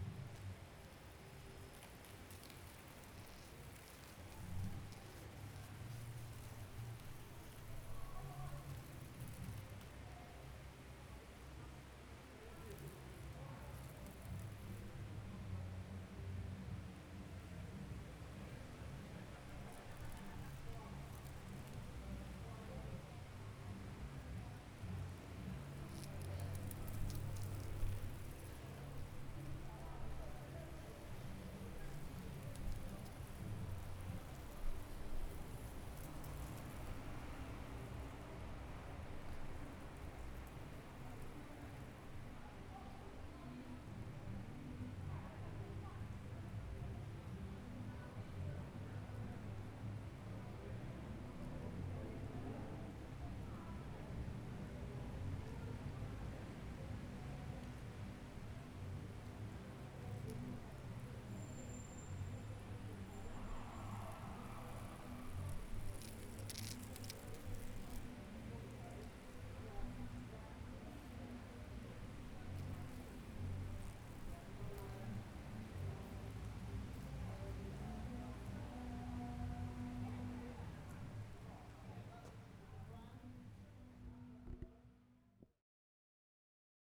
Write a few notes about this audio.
Late night recording before a storm, wind blowing leaves around in front of Firstsite Art Gallery, Colchester.